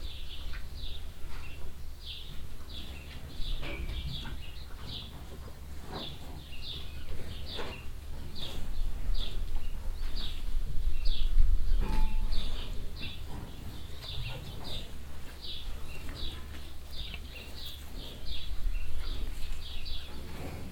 Evening Time at a cowshed. Many Cows standing close by close at a trough. The sound of mouthes and tongues plus some metallic rattling of the trough as the cows move.
Wahlhausen, Kuhstall, Bottich
Am Abend bei einem Kuhstall. Viele Kühe stehen nah beieinander an einem Bottich. Das Geräusch ihrer Mäuler und Zungen sowie ein metallenes Rattern der Bottiche, wenn die Kühe sich bewegen.
Wahlhausen, étable à vaches, abreuvoir
Le soir dans une étable à vaches. De nombreuses vaches concentrées autour d’un abreuvoir. Le bruit des bouches et des langues plus le raclement métallique de l’abreuvoir quand les vaches bougent.
Project - Klangraum Our - topographic field recordings, sound objects and social ambiences